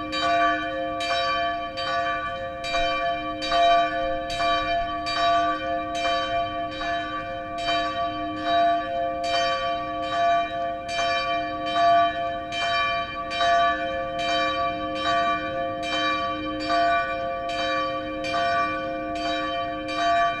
Former ambit of the monastery of Ursula. One side is the building of the New Scene National Theater, the other baroque building of the monastery.
The bells from the Ursula Church